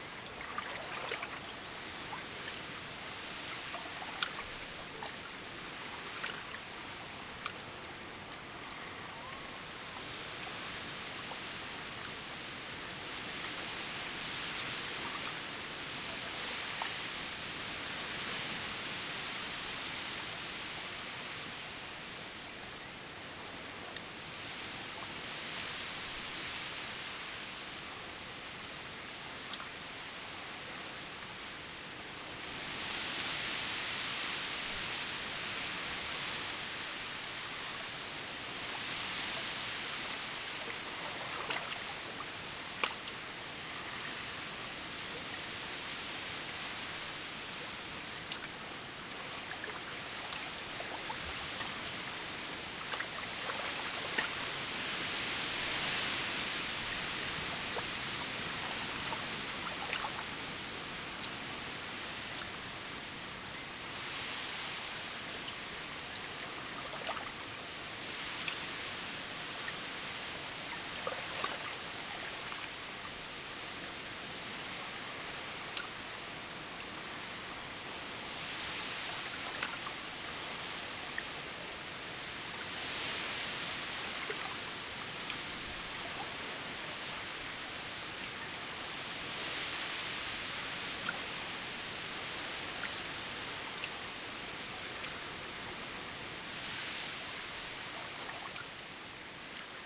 stormy late afternoon, small pier, wind blows through reed, coot calls
the city, the country & me: june 13, 2015
Workum, Netherlands, 13 June 2015